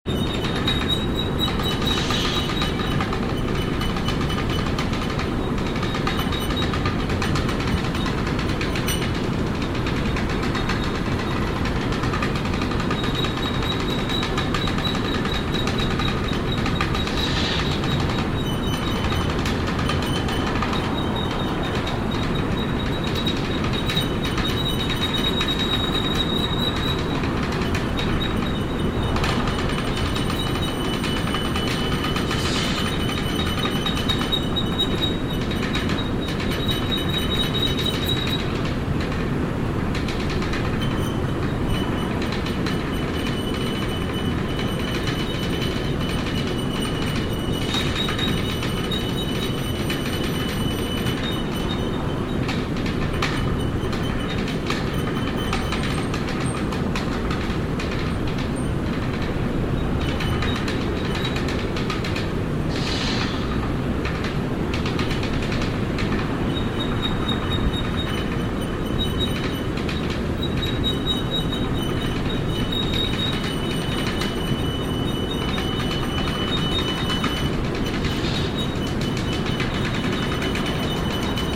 Overhead belt conveyor transporting sintered iron ore from Arcelor's Ougrée sintering plant to the blast furnace in Seraing. The noise of this conveyor used to be one of the most characteristic features in the area and has even been immortalised in the soundtracks of several films by the Frères Dardenne. Binaural recording. Zoom H2 with OKM ear mics.